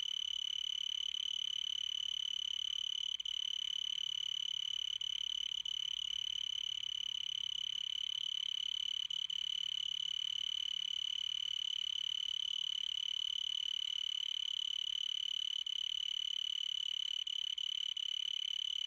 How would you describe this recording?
Cicadas with strong sound, after the rain, in park. Recorded with Zoom H5 (MHS6 - XY stereo head).